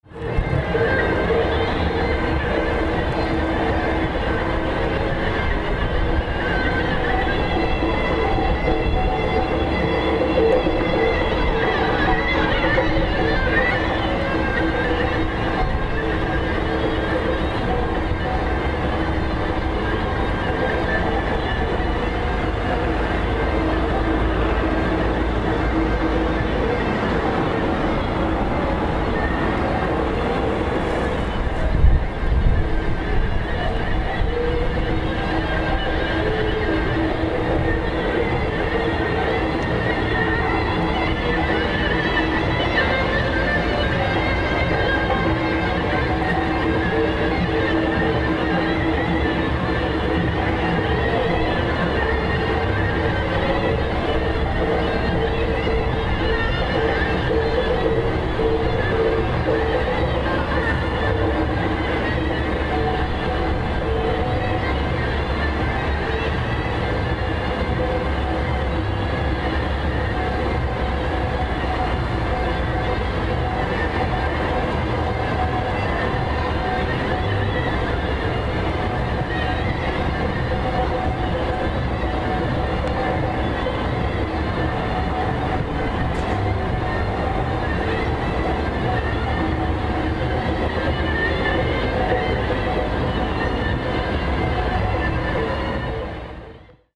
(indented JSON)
{
  "title": "Les Jardins de Carthage - Sidi Daoud, Tunis, Tunisie - Wedding music (tapé) in the neighborhood",
  "date": "2012-08-26 22:13:00",
  "description": "Wind and echoes are playing with this saturated party sound.",
  "latitude": "36.86",
  "longitude": "10.30",
  "altitude": "4",
  "timezone": "Africa/Tunis"
}